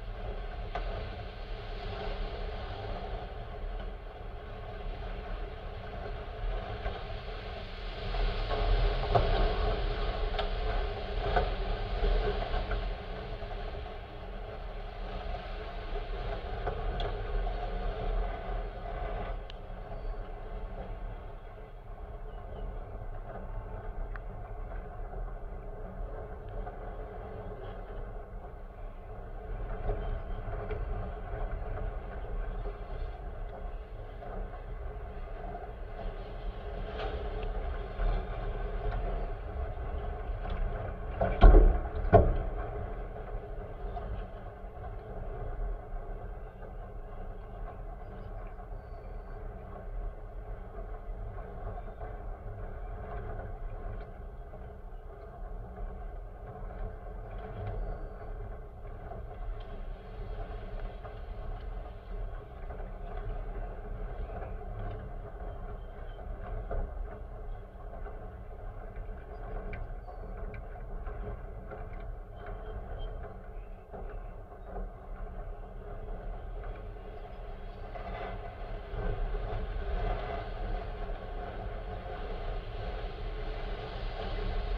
Šlavantai, Lithuania - Metal boat swaying
Dual contact microphone recording of a metal boat swaying in the wind and brushing against bulrush.